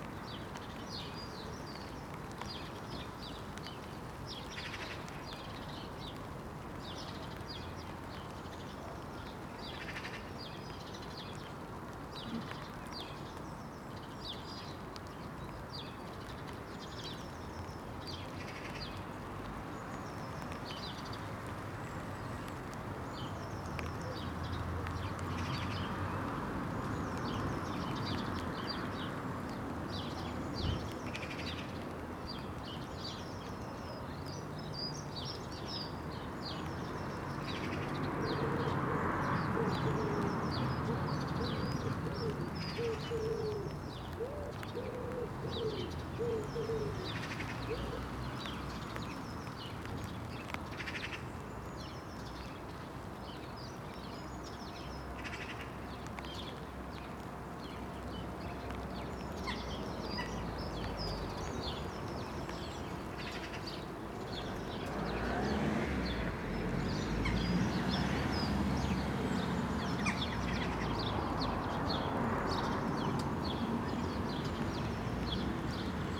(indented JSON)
{
  "title": "Contención Island Day 65 outer north - Walking to the sounds of Contención Island Day 65 Wednesday March 10th",
  "date": "2021-03-10 09:17:00",
  "description": "The Poplars High Street Salters Road Elsdon Road Henry Street Hedley Terrace\nTucked into the laurel\nas the rain begins\nto the chat of sparrows\nOn the seventh floor\nworkmen shout\nas they hand down planks\nOn a far skyline\njackdaws dot and shuffle",
  "latitude": "55.01",
  "longitude": "-1.62",
  "altitude": "64",
  "timezone": "Europe/London"
}